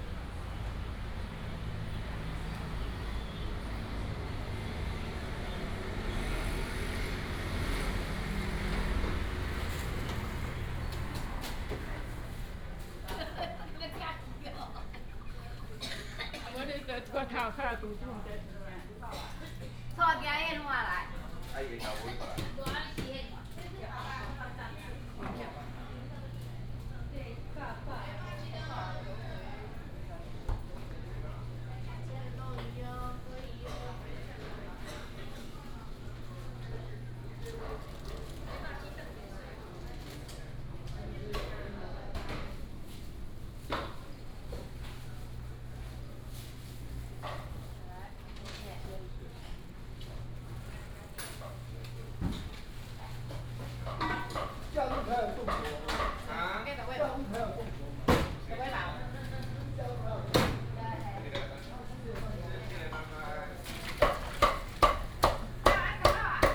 南寮市場, Hsinchu City - small traditional market
small traditional market, vendors peddling, housewives bargaining, and girls gossiping